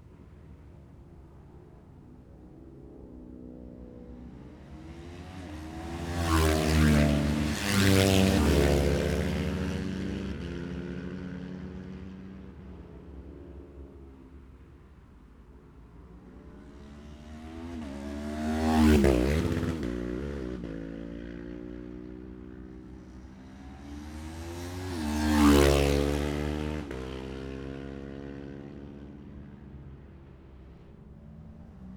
Gold Cup 2020 ... Twins qualifying ... Monument Out ...